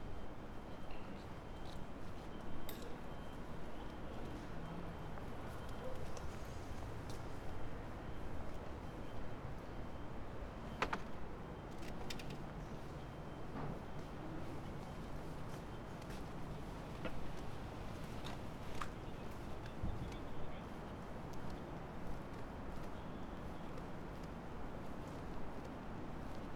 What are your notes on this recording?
sparse sounds around Kita district. streets here are deserted at this time. businesses and restaurants are closed. sonic scape dominated by fans of air conditioning.